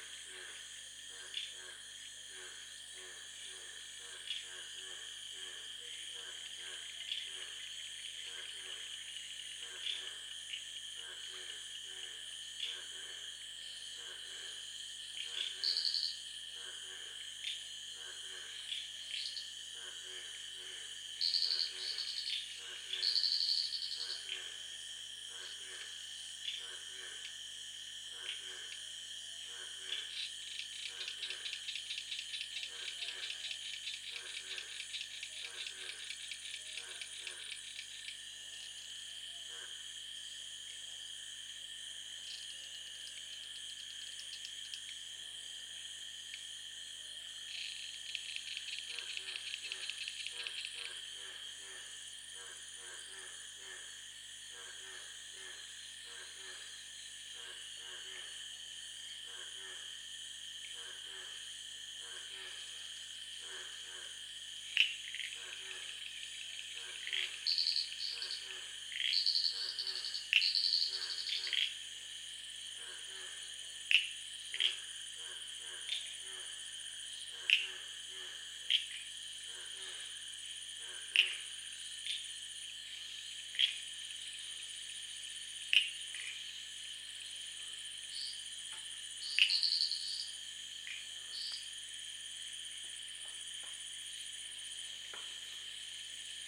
Koforidua, Ghana - Suburban Ghana Soundscapes 5: the Pond
A part of field recordings for soundscape ecology research and exhibition.
Rhythms and variations of vocal intensities of species in sound. Hum in sound comes from high tension cables running near the pond.
Recording format: Binaural.
Recording gear: Soundman OKM II into ZOOM F4.
Date: 22.04.2022.
Time: Between 00 and 5 AM.